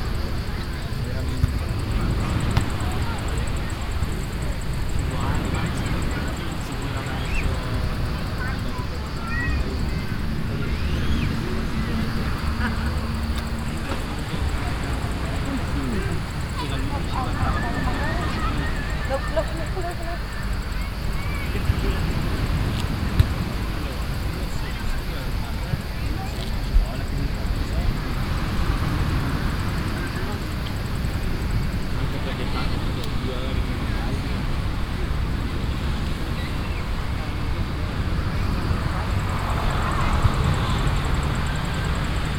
varigotti, via aurelia, beach
atmosphere at a private beach in the afternoon, traffic passing by, ball games, the tickling of the sweet water shower, the drift of the sea
soundmap international: social ambiences/ listen to the people in & outdoor topographic field recordings
28 July